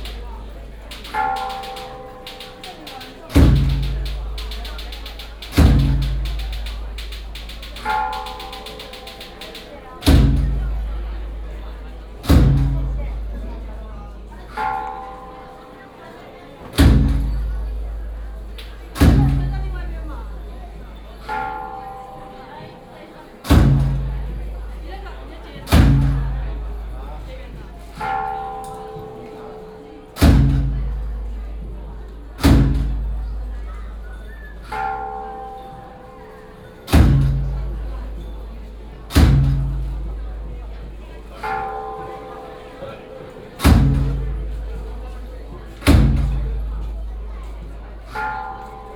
白沙屯拱天宮, Tongxiao Township - Inside the temple
Inside the temple
9 March 2017, 10:26